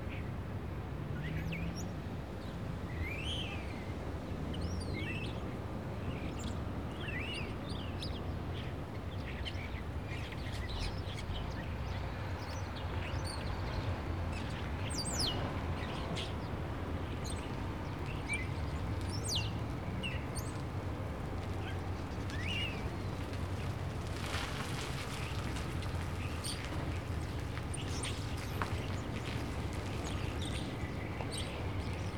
Mermaid Avenue, sound of birds.
Zoom H4n
NY, USA, 8 November 2016, ~16:00